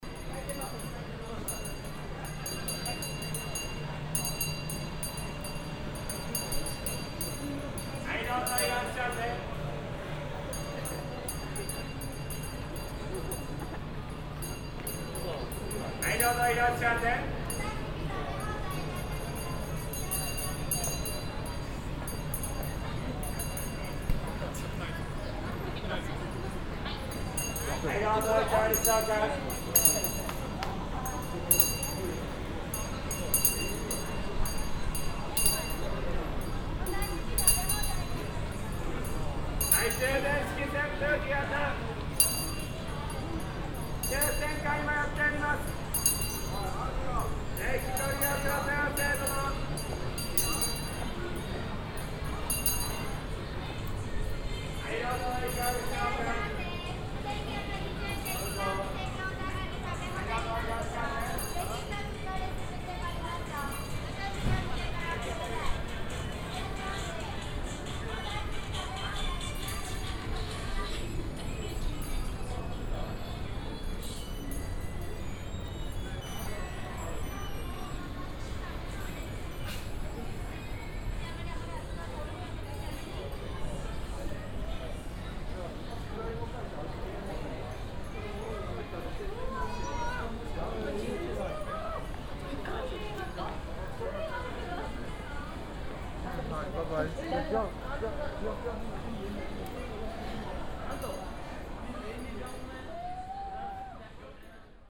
yokohama, chinatown, man with bell
At the west side entrance to Yokohama chinatown. A chinese man with a bell announcing his shops goods. In the background steps and voices of passengers.
international city scapes - social ambiences and topographic field recordings